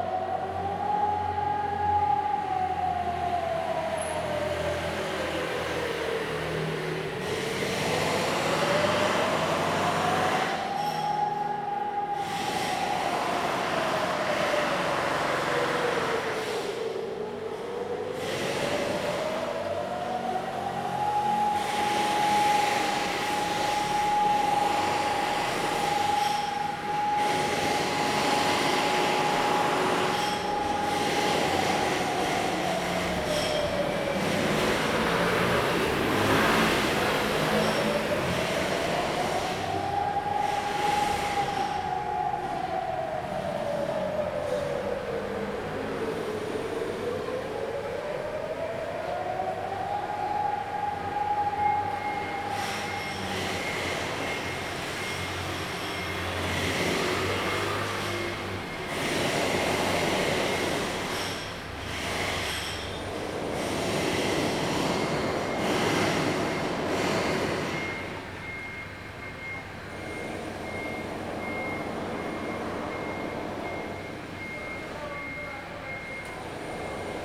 大仁街, Tamsui District - Construction Sound and Exercise sound alarm
Construction Sound, Exercise sound alarm
Zoom H2n MS+XY
Tamsui District, New Taipei City, Taiwan, April 2016